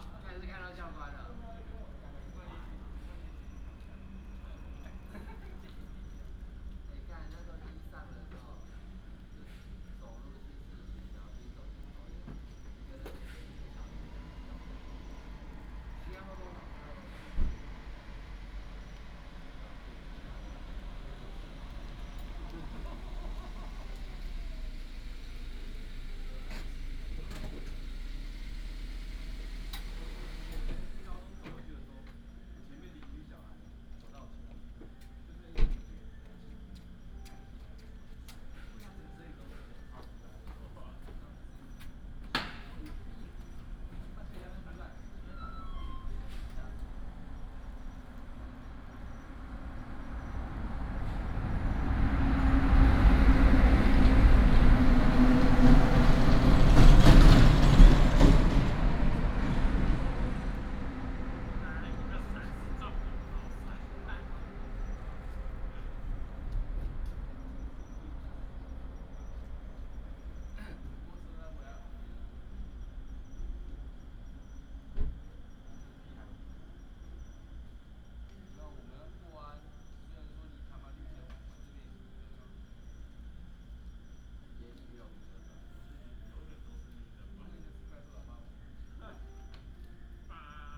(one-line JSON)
{"title": "濱海公路19號, Xiangshan Dist., Hsinchu City - Late at the convenience store square", "date": "2017-09-21 02:48:00", "description": "Late at the convenience store square, traffic sound, Binaural recordings, Sony PCM D100+ Soundman OKM II", "latitude": "24.82", "longitude": "120.92", "altitude": "4", "timezone": "Asia/Taipei"}